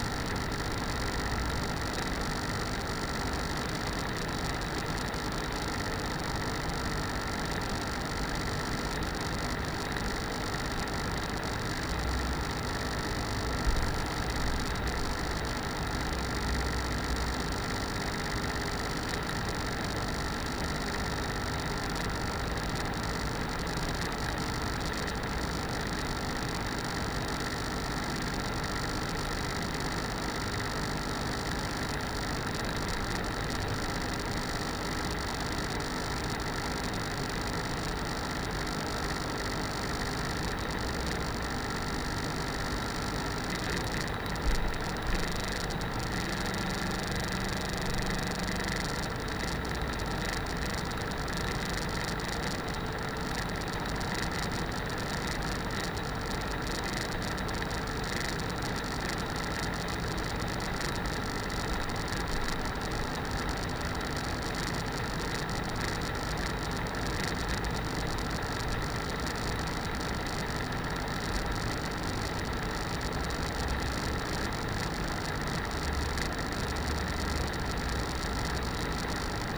most of the laptops in the office have a serious millage on their parts and processed a lot of data. cooling fans are worn out and rattle terribly.
Poznań, Poland